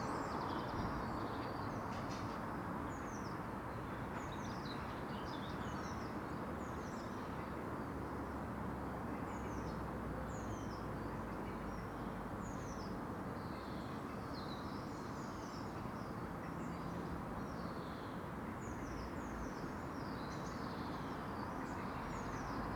Contención Island Day 51 inner northeast - Walking to the sounds of Contención Island Day 51 Wednesday February 24th
The Poplars Roseworth Avenue The Grove Yonder Cottage
Fencing contains the laurel bush gloom
The bursting twisted tracery of tree bark
A dog sniffs the gate post
Cyclist chat within the pulse of traffic
North East England, England, United Kingdom, 24 February 2021